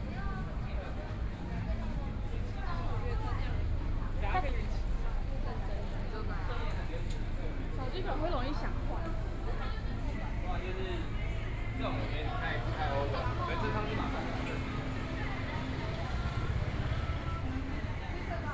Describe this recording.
Walking in the district, Many young people shopping district